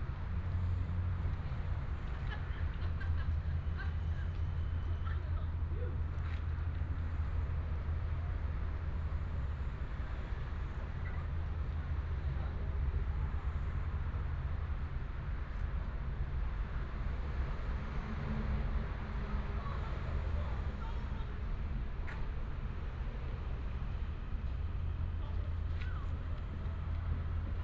Zhuifen St., Dadu Dist. - Traffic sound
Traffic sound, A small square outside the station